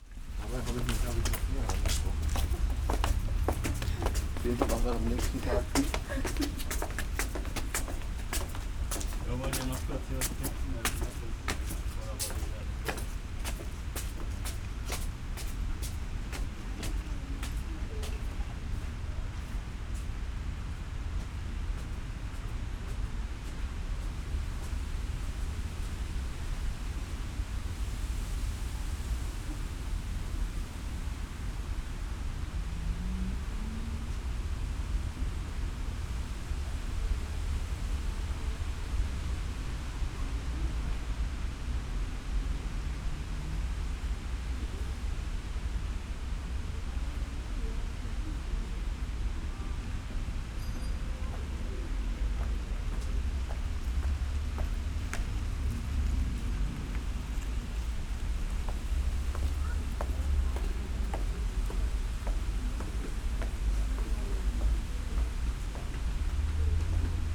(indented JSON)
{
  "title": "Viktoriapark, Berlin, Deutschland - Kreuzberg monument, steps on stairs",
  "date": "2013-08-24 11:40:00",
  "description": "stairway, steps of visitors at the iron Kreuzberg monument, which gave this part of Berlin its name.\n(Sony PCM D50, DPA4060)",
  "latitude": "52.49",
  "longitude": "13.38",
  "altitude": "62",
  "timezone": "Europe/Berlin"
}